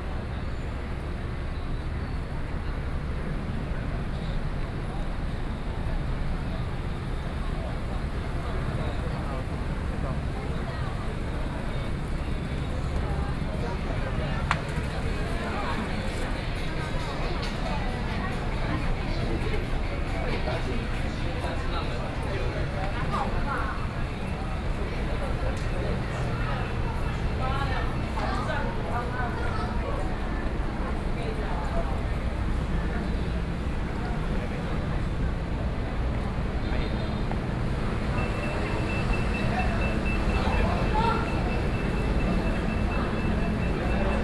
Ximending, Taipei City - soundwalk
walking from Ximen Station to Emei Street, Binaural recordings, Sony PCM D50 + Soundman OKM II